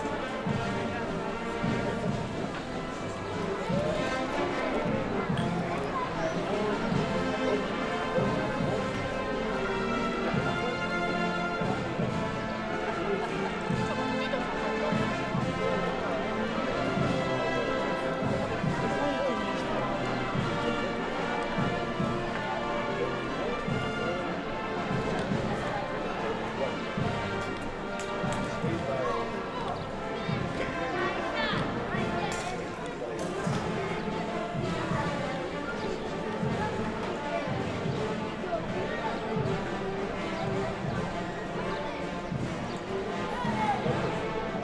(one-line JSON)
{"title": "Soraluze Kalea, Donostia, Gipuzkoa, Espagne - Bandas in San Sebastian", "date": "2022-05-31 11:25:00", "description": "musician group, church bell, city noise\nCaptation : ZOOMH6", "latitude": "43.32", "longitude": "-1.98", "altitude": "12", "timezone": "Europe/Madrid"}